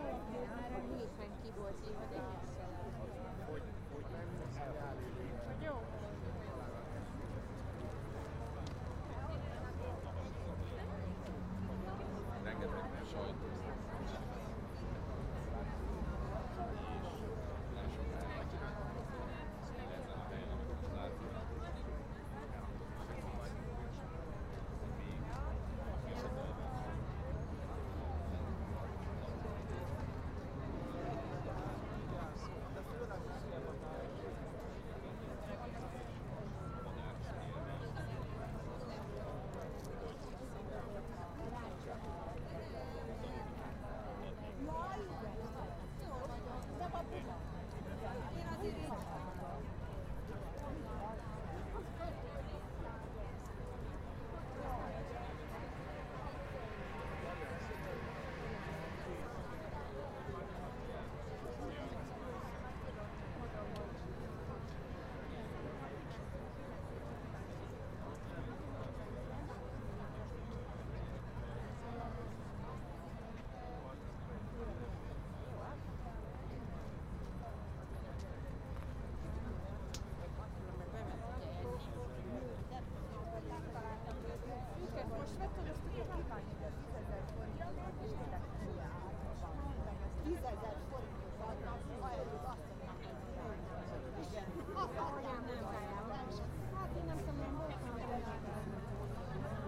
Atmosphere before Demonstration Budapest - Atmosphere before Demonstration
A guy called Ahmed was convicted for ten years because of 'terrorism'. He spoke through a megaphone during refugees crossed the former closed border to Hungary and threw three objects, but it is unclear if he hit someone. Named after the village 'Racoszi' the eleven imprisoned refugees are supported by a campaign of the group MIGSZOL. Recorded with a Tascam DR-100